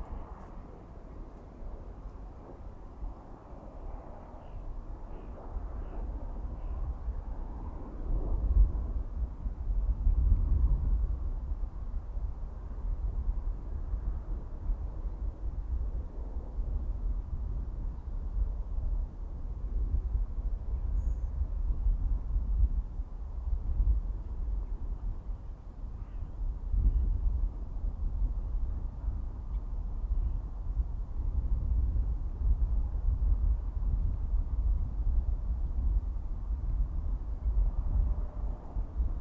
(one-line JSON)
{"title": "Old Sarum, Salisbury, UK - 037 Old Sarum ambience", "date": "2017-02-06 13:15:00", "latitude": "51.09", "longitude": "-1.81", "altitude": "92", "timezone": "GMT+1"}